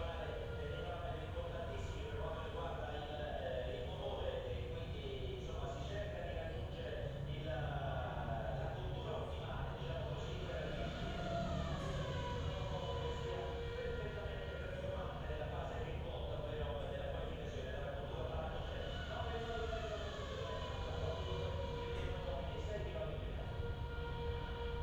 inner yard window, Piazza Cornelia Romana, Trieste, Italy - radio or TV, a race